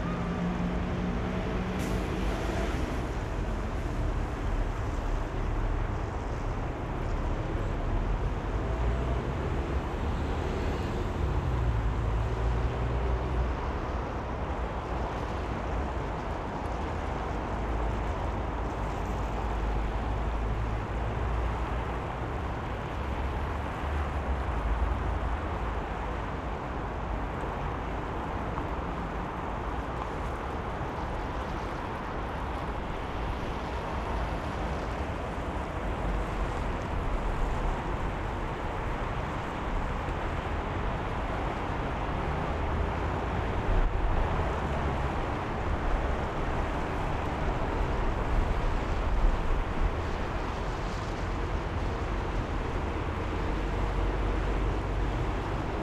пл. Революции, Челябинск, Челябинская обл., Россия - The main square of Chelyabinsk. Lenin monument. Big traffic cars.
The main square of Chelyabinsk. Lenin monument. Big traffic cars.
Zoom F1 + XYH6